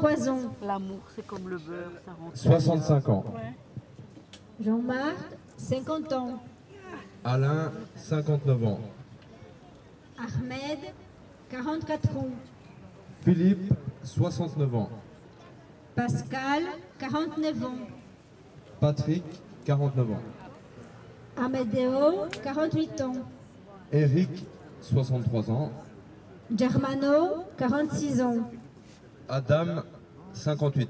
Place de l'Albertine, Bruxelles, Belgique - Reading the names of the 76 homeless people who died on the street in 2021
A tree was planted 12 years ago to honor the homeless who died on the streets.
Un arbre a été planté il y a 12 ans pour rendre hommage aux morts de la rue.
Chaque année la liste de tous ceux qui sont morts dans la rue est lue ici.
Tech Note : Olympus LS5 internal microphones.
Région de Bruxelles-Capitale - Brussels Hoofdstedelijk Gewest, België / Belgique / Belgien, 25 May 2022, 14:06